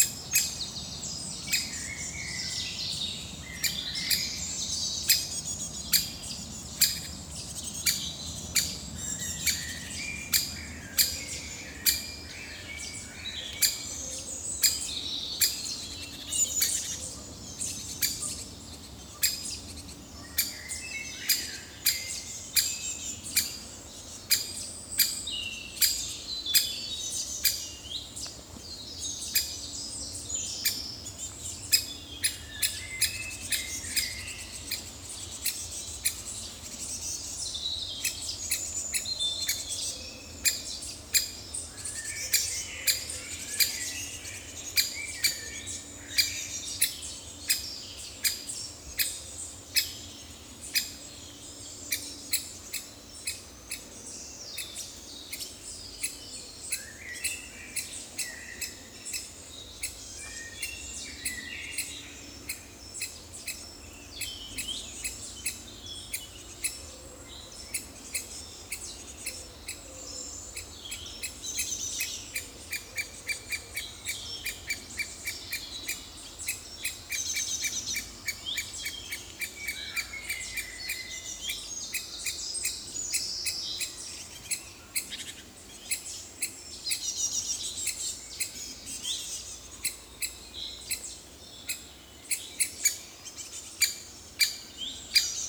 Thuin, Belgique - Birds in the forest
Anxious Great Spotted Woodpecker, lot of juvenile Great Tit, juvenile Eurasian Blue Tit, Robin, blackbird. 10:50 mn, it's a small fight.
Thuin, Belgium, 3 June 2018, ~10:00